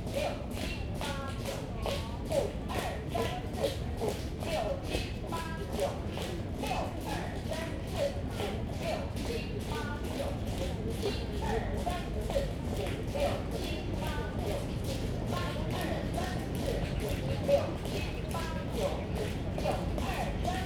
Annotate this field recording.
in the Park, Beat the foot, Many elderly people do aerobics, Zoom H2n MS+XY